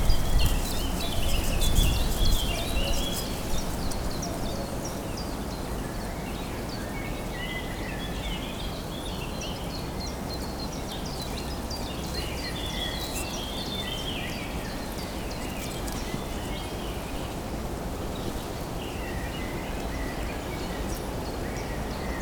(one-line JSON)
{"title": "Radajewo, beaver pond - forest ambience at the pond", "date": "2019-05-05 13:33:00", "description": "Ambience in the forest at a pool of water created by beaver dams placed on a small stream. Very serene place. Lots of different birds chirping as well as frog croak. Rustle of dry rushes on the pond. (roland r-07)", "latitude": "52.51", "longitude": "16.95", "altitude": "62", "timezone": "Europe/Warsaw"}